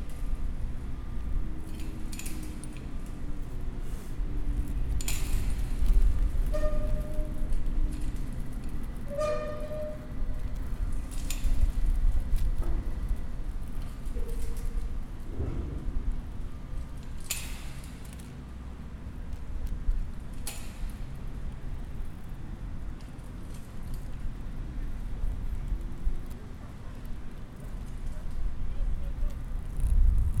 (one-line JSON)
{"title": "Binckhorst, Laak, The Netherlands - field recording workshop", "date": "2012-05-21 12:30:00", "description": "recording cars sounds and the sounds near the fence.", "latitude": "52.07", "longitude": "4.33", "altitude": "1", "timezone": "Europe/Amsterdam"}